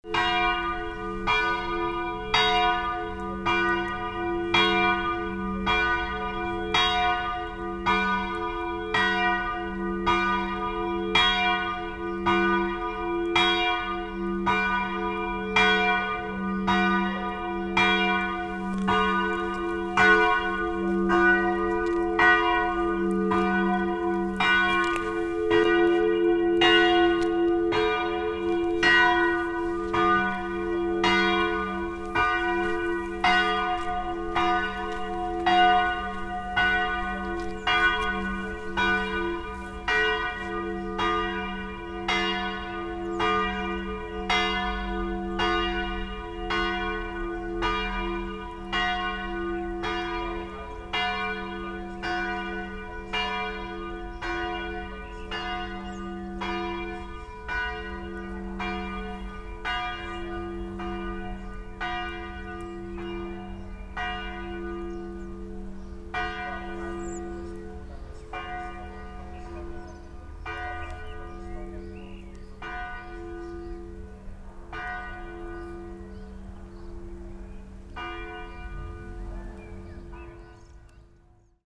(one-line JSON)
{"title": "Trsat, Chathedral, the bell", "date": "2008-05-12 20:00:00", "description": "Bell ringing @ Trsat (Rijeka. HR).", "latitude": "45.33", "longitude": "14.46", "altitude": "132", "timezone": "Europe/Zagreb"}